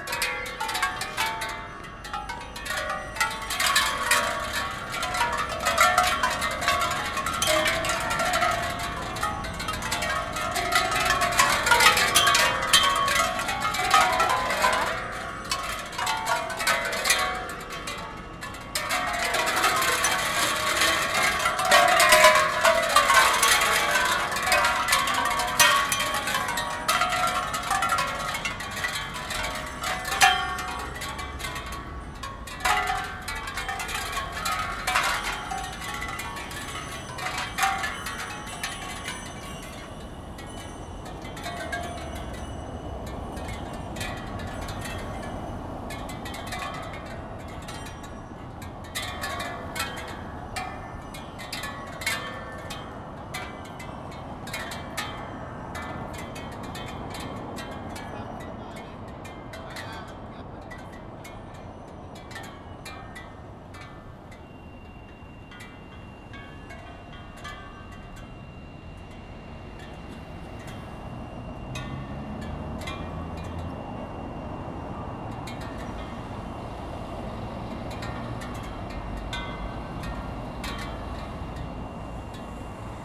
Santo Ildefonso, Portugal - Texturas Sonoras, Avenida dos Aliados
Sounds of the installation "Texturas Sonoras" by Isabel Barbas in Avenida dos Aliados, Porto.
Zoom H4n
Carlo Patrão
Porto, Portugal, 9 December, 20:30